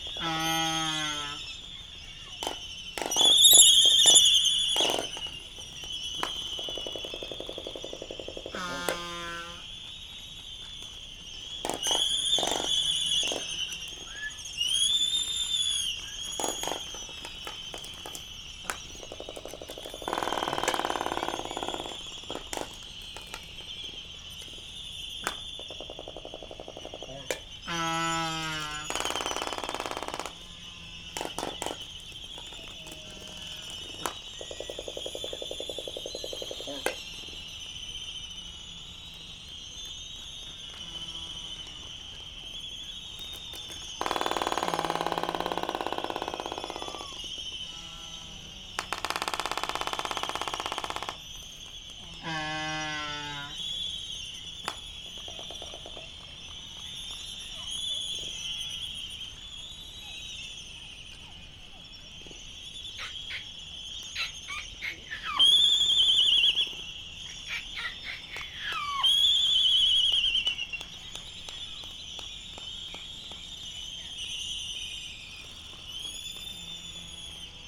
United States Minor Outlying Islands - Laysan albatross dancing ...
Laysan albatross dancing ... Sand Island ... Midway Atoll ... open lavaliers on mini tripod ... background noise ...
13 March 2012